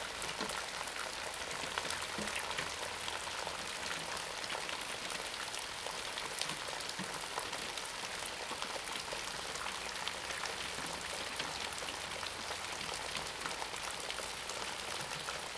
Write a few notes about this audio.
early morning, Rain sound, Many leaves on the ground, Zoom H2n MS+XY